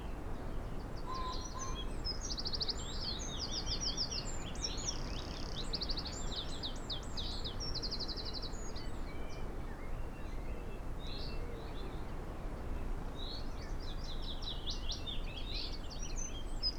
willow warbler soundscape ... song and calls ... xlr sass in crook of tree to zoom h5 ... bird song ... calls from ... dunnock ... blackcap ... wren ... yellowhammer ... chaffinch ... blackbird ... pheasant ... blackcap ... fieldfare ... crow ... willow warblers arrived on thursday ...